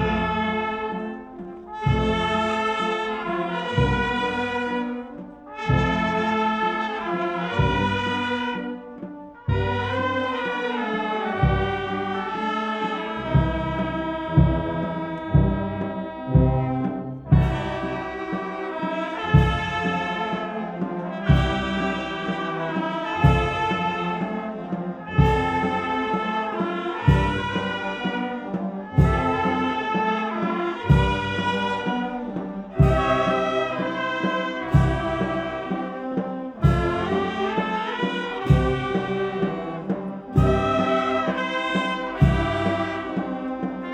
Dingli, Malta - passion play, procession, marching band
Dingli, Malta, marching band playing during a passion play procession.
(SD702, AT BP4025)
Il-Kbira, Ħad-Dingli, Malta, 8 April